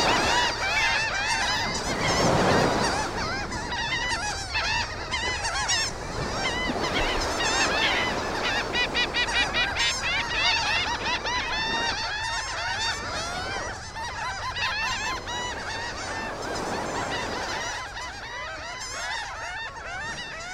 Cumberland County, NJ, USA - migratory shorebirds
Delaware Bay location (Fortescue, NJ); a sectioned off (protected)beach area for birds migrating up the eastern coast of the USA.
Fortescue, NJ, USA, 22 May 2017, ~17:00